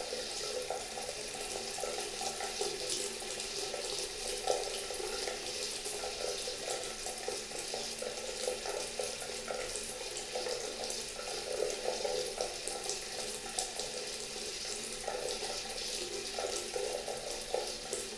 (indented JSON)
{
  "title": "Ametisthorst, Den Haag, Nederland - Running watertab",
  "date": "2020-06-07 09:38:00",
  "description": "Recorder with a Philips Voice Tracer DVT7500",
  "latitude": "52.09",
  "longitude": "4.36",
  "altitude": "3",
  "timezone": "Europe/Amsterdam"
}